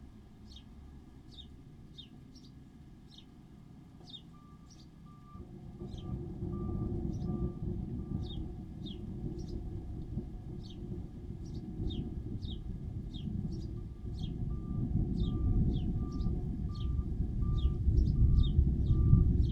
Luttons, UK - distant combine harvesters ... distant thunderstorm ...
distant combine harvesters ... distant thunderstorm ... lavalier mics in a half filled mop bucket ... bird calls from ... house sparrow ... collared dove ... flock of starlings arrive in an adjacent hedge at the end ...